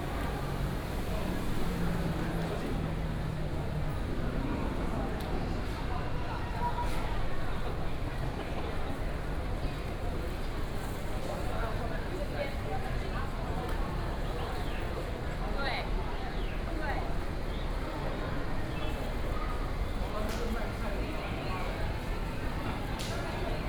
建國假日花市, Taipei City - Walking through the Flower Market
Flower Market, In the Viaduct below, Traffic noise
Taipei City, Taiwan, 27 June, 17:54